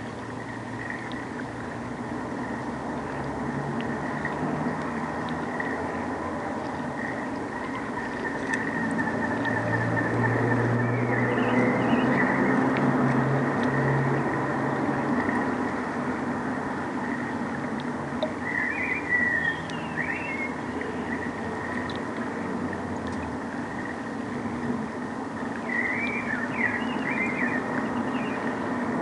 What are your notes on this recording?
Recorded with a Sound Devices MixPre-3 and a stereo pair of JrF hydrophones.